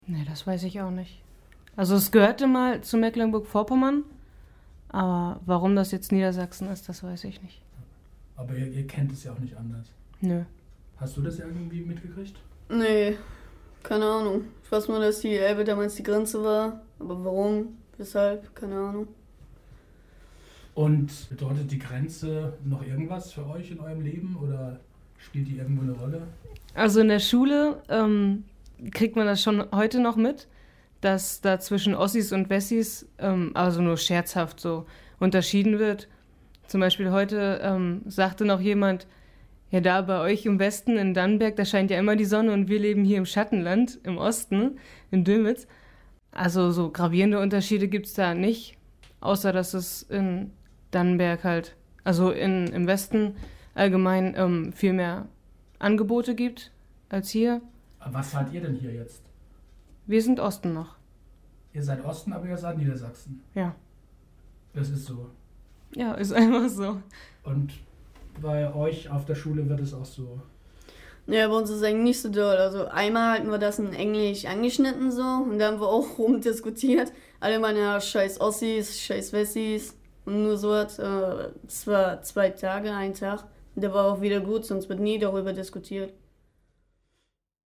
stixe - zwei schuelerinnen
Produktion: Deutschlandradio Kultur/Norddeutscher Rundfunk 2009